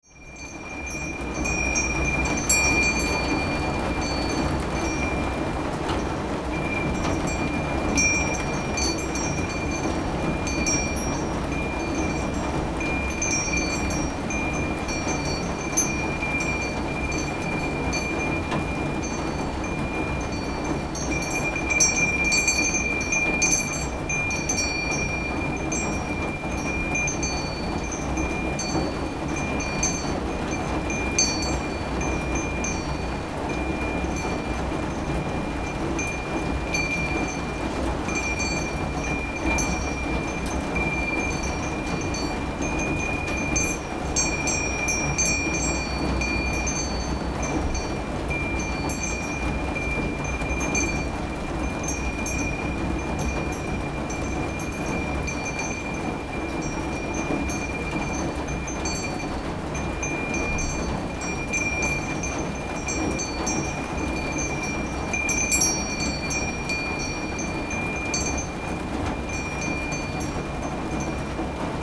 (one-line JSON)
{"title": "Utena, Lithuania, balcony, rain", "date": "2012-08-10 14:50:00", "description": "rain in my balcony (if somebody would like to drop a bomb - it's right here) and happy chimes", "latitude": "55.51", "longitude": "25.59", "altitude": "122", "timezone": "Europe/Vilnius"}